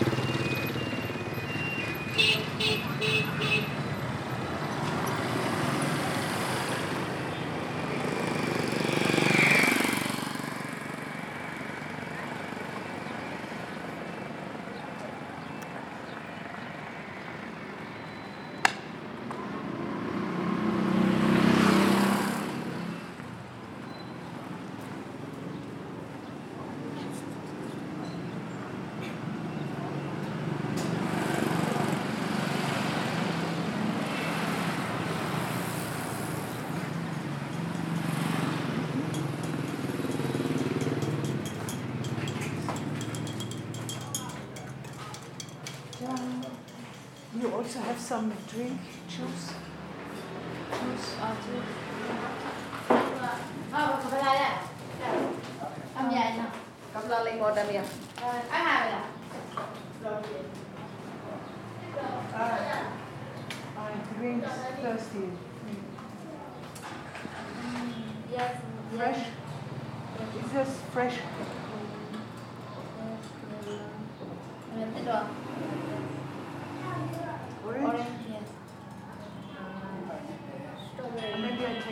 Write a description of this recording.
pyin u lwin may myo central market II